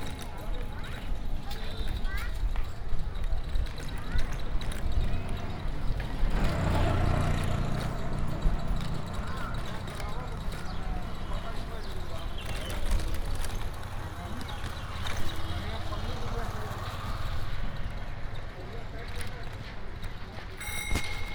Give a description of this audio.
took a pedal rickshaw just for this recording on a busy street in varanasi - march 2008